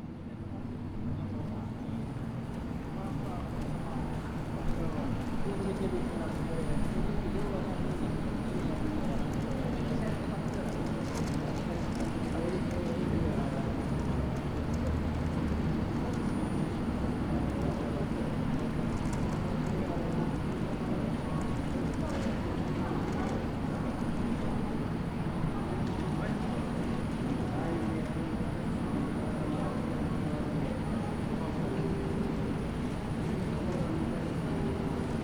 Rotuaarinaukio, Oulu, Finland - Slow day in Oulu
Ambiance in the centre of Oulu on the first proper summer weekend of 2020. Rather quiet as people spend their time elsewhere. Zoom h5 with default X/Y module.